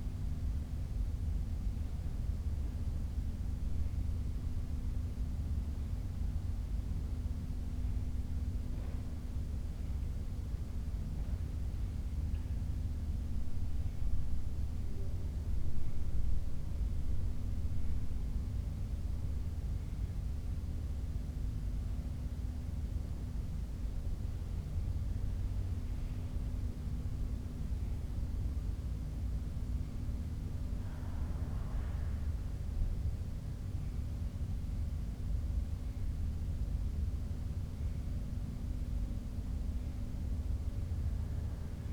inner yard window, Piazza Cornelia Romana, Trieste, Italy - dreams
9 September, 01:25